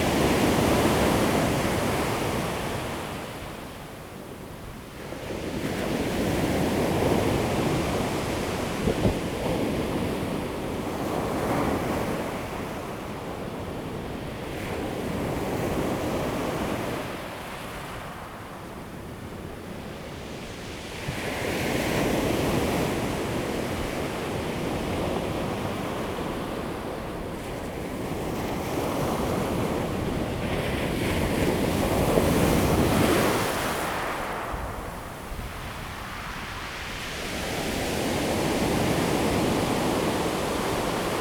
大鳥村, Dawu Township - In the beach
Sound of the waves, In the beach, The weather is very hot
Zoom H2n MS +XY
5 September 2014, 16:37